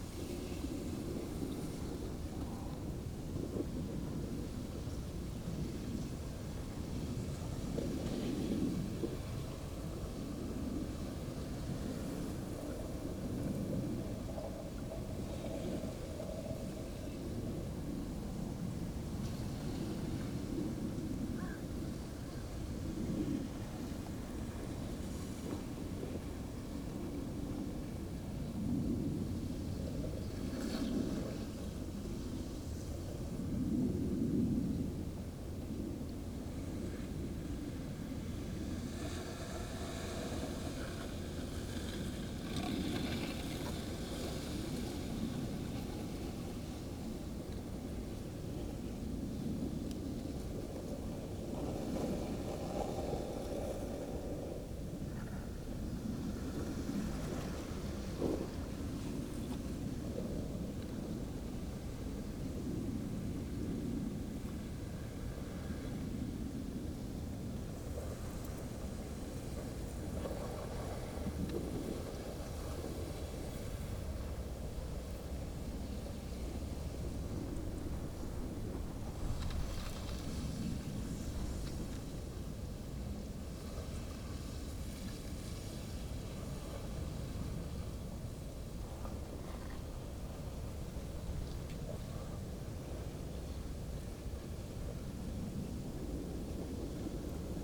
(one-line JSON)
{"title": "hohensaaten/oder: groyne - the city, the country & me: drift ice", "date": "2016-01-04 13:56:00", "description": "oder river freezing over, pieces of ice mutually crushing each other\nthe city, the country & me: january 4, 2016", "latitude": "52.87", "longitude": "14.15", "altitude": "2", "timezone": "Europe/Berlin"}